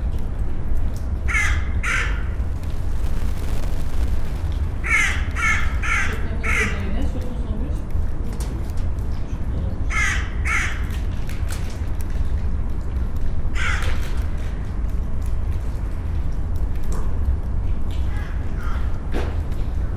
Seraing, Belgique - Crow
We are eating on the furnaces of the abandoned coke plant. A crow is looking to our bread and is asking us, in aim to have some of it.